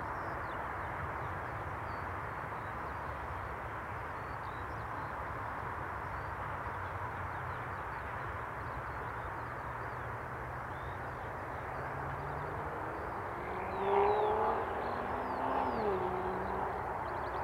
The Drive Moor Place woodlands Oaklands Avenue Oaklands Grandstand Road Town Moor
A skylark murmers
reluctant to sing
in February sunshine
Flows of people
crisscross the moor
Jackdaw and common gull stand
as crows lumber into the wind
26 February 2021, 10:35